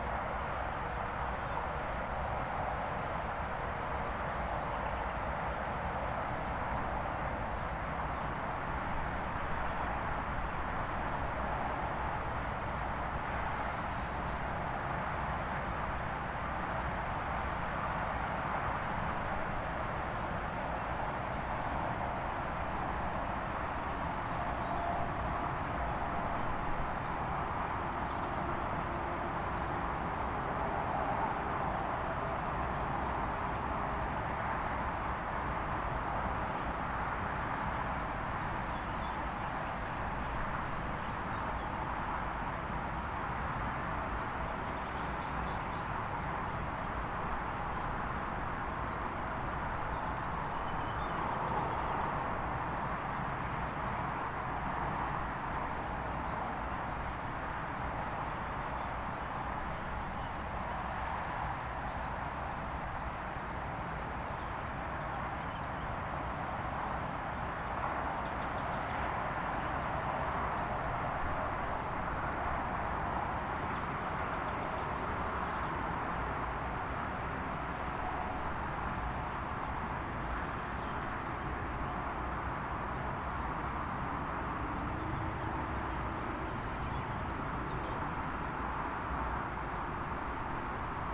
In Sichtweite der A5 dieselbige aufgenommen. Dazwischen liebliches Vogelkonzert.
Ötigheim, Germany, 9 May 2019, 09:07